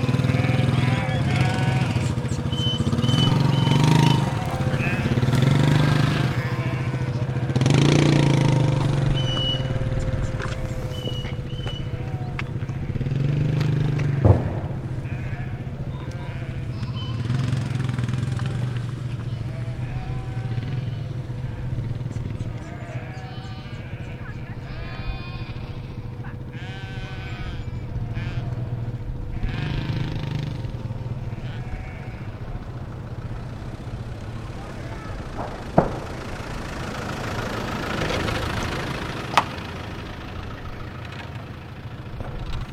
Otterburn Artillery Range
Shepherds herding their flock along side the entrance gate to Otterburn Camp.
Newcastle Upon Tyne, Northumberland, UK, 15 June 2010, ~13:00